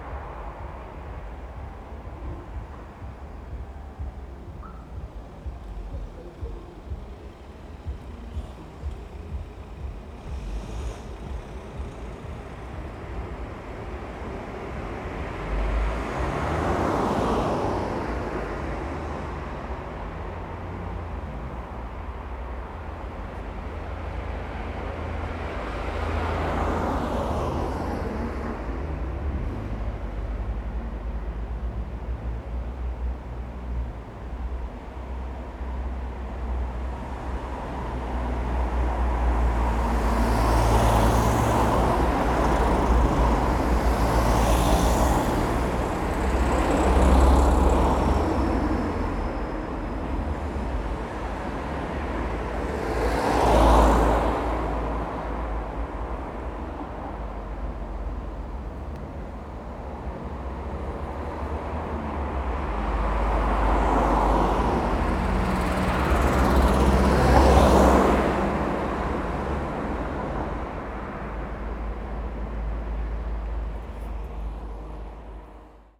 Anderlecht, Belgium - Traffic in Av Francois Malherbe
Louder traffic in this busier street. After walking past the quiet areas and gardens you definitely notice the difference here. The close cars prevent one from from hearing into the distance. Sound has closed in again.